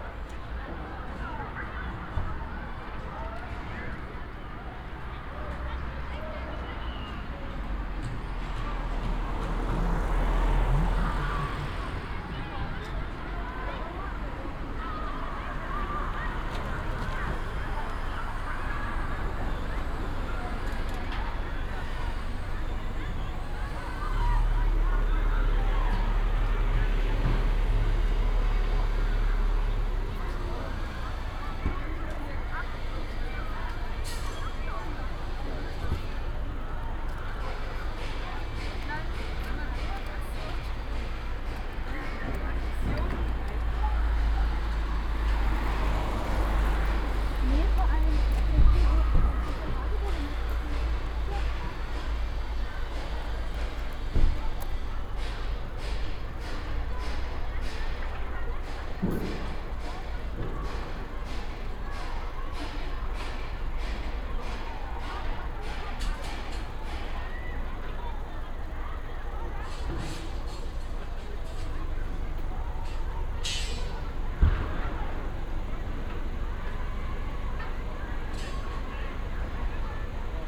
Helmut-Schmidt-Allee, München, Deutschland - Freiham at Noon
A construction site in the immediate vicinity of the education campus at lunchtime in Freiham